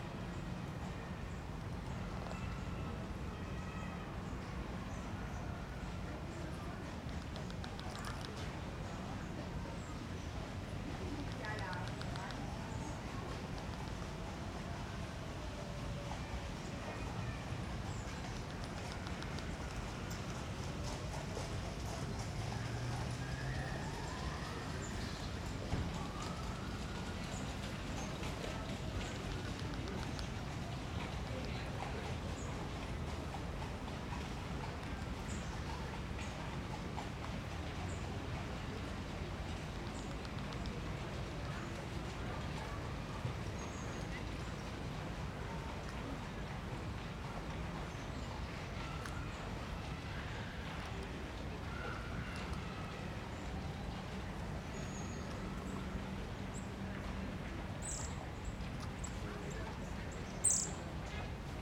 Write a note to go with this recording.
bird life in an old pine tree, Schonbrunn park